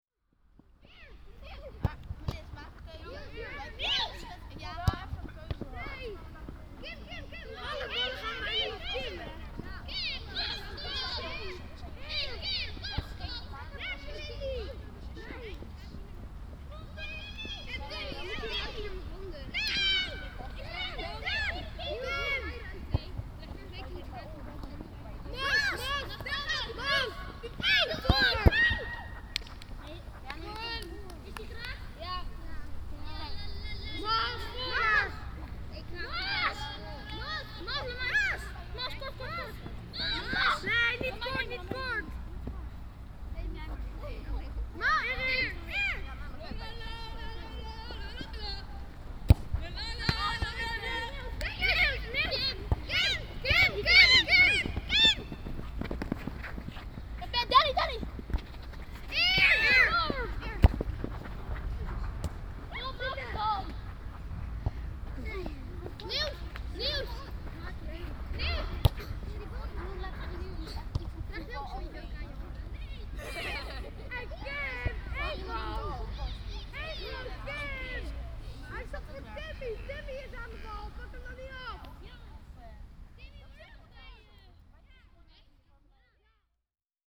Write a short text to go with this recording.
schoolklas speelt voetbal, schoolchildren playing soccer